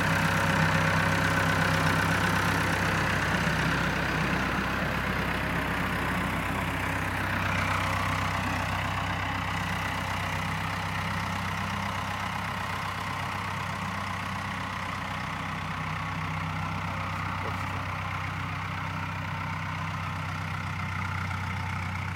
tractor arriving - tractor leaving
stafsäter recordings.
recorded july, 2008.